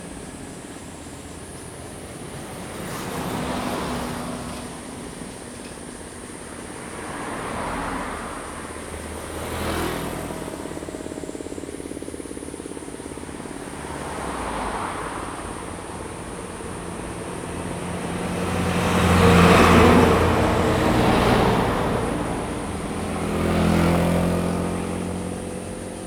銅門村, Sioulin Township - In the side of the road
In the side of the road, Traffic Sound, Cicadas sound, Construction Sound, Hot weather
Zoom H2n MS+XY